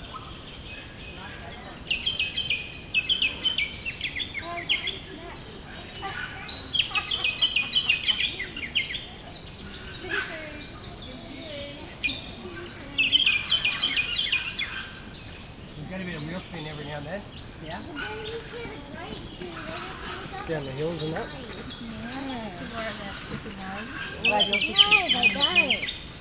Moggs Creek Cct, Eastern View VIC, Australia - Morning birds at Moggs Creek
Otway forest alive with the sound of birds on a cold, sunny winter morning. Recorded with an Olympus LS-10.